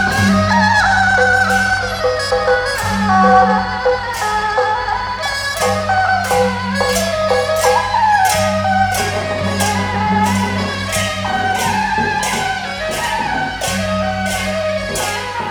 Cianjhen, Kaohsiung - traditional temple festivals
17 March 2012, ~17:00